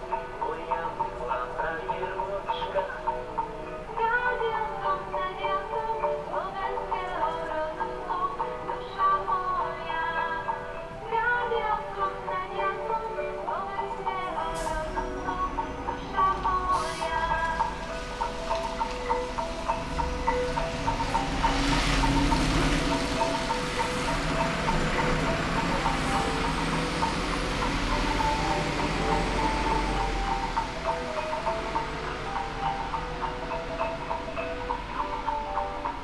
Trenčín-Kubrica, Slovenská republika - Scary Tale
Haunted by an aching fairy-tale broadcasted through the one street of the allegedly quaint hamlet, I find shelter at the local drinking den. Occasional villagers stopping by appear to confirm the premise’s role as a haven, where an ostensibly permanent special offer of Borovička for 40 cents is promising relief.
Trencin-Kubrica, Slovakia, 7 December 2013